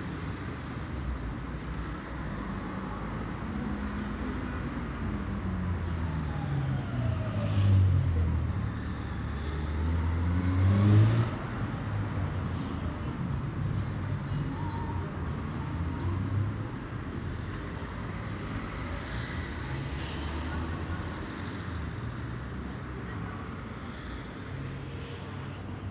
A côté de La Station, Nice, France - Next to La Station at rush hour
Cars, faint bird chirps
7 May